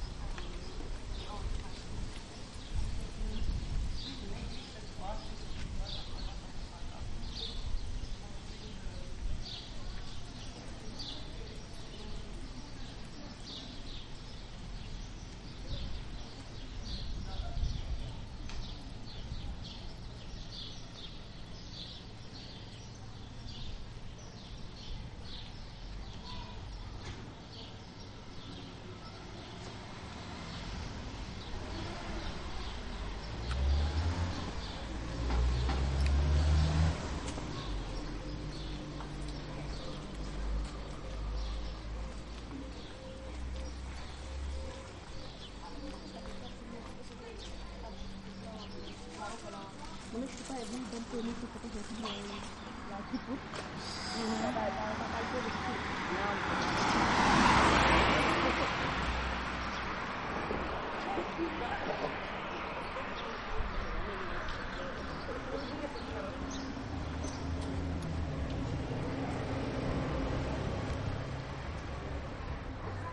North Railway Station.Matache Market. Popa Tatu Str. Cismigiu Park.